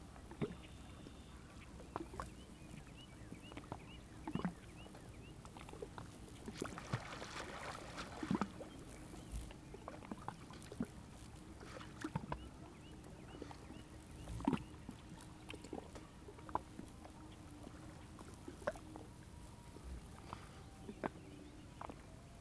Dog Lake, ON, Canada - Ontario cottage country soundscape
Favourite swimming spot on Dog Lake. Light, warm wind. Many dragonflies flitting around. Party music drifting from cottage across the lake. Powerboats. Dive. Swim. Zoom H2n, 120degree stereo.